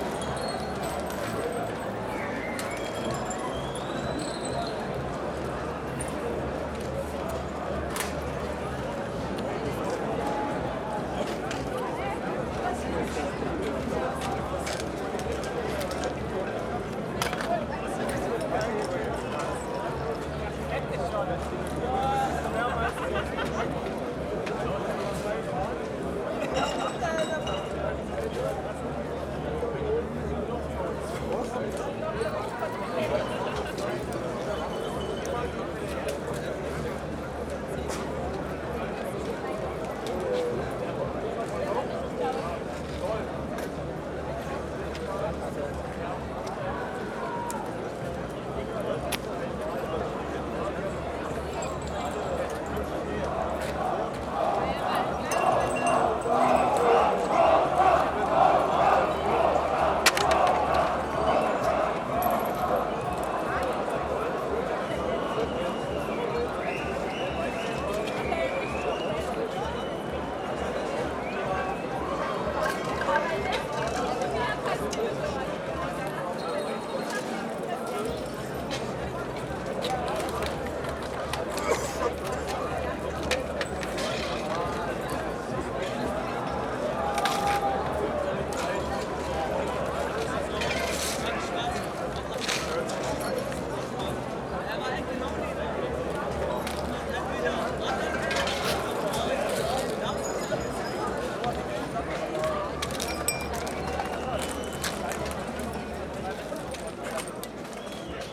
berlin, skalitzer straße: 1st may soundwalk (6) - the city, the country & me: 1st may soundwalk (6)
1st may soundwalk with udo noll
the city, the country & me: may 1, 2011